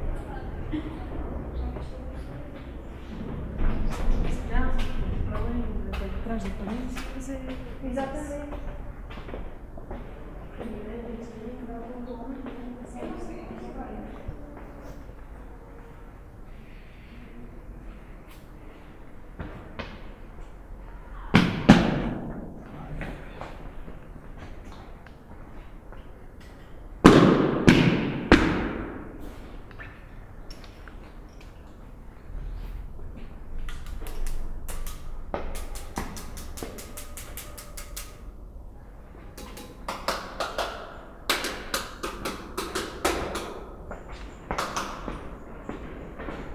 R. Marquês de Ávila e Bolama, Covilhã, Portugal - Binaural
Workshop Criação de paisagens sonoras para documentário.